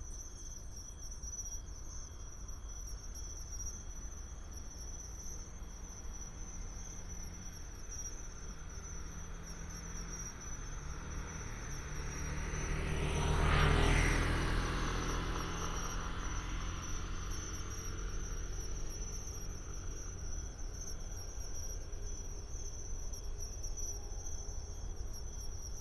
觀音區中興路, Taoyuan City - Insects sound
Insects, Traffic sound, Late night street, Binaural recordings, Sony PCM D100+ Soundman OKM II
Guanyin District, Taoyuan City, Taiwan, 20 September